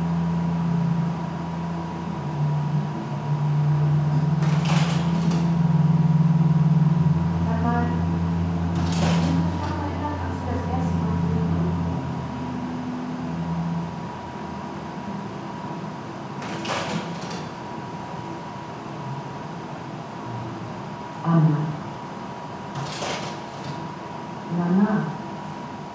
Kunsthaus, Graz, Austria - slide show in exhibition
two slide projectors at work in an exhibition, and the soundtrack of a video
(PCM D-50)